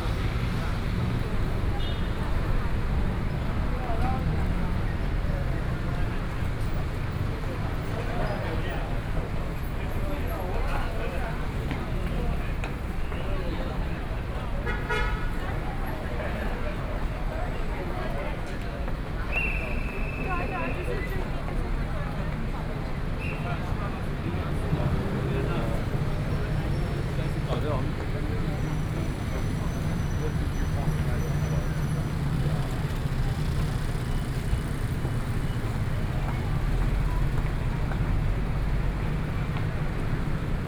中正區黎明里, Taipei City - soundwalk
Starting from MRT station platform, Went outside the station
Binaural recordings, Sony PCM D100 + Soundman OKM II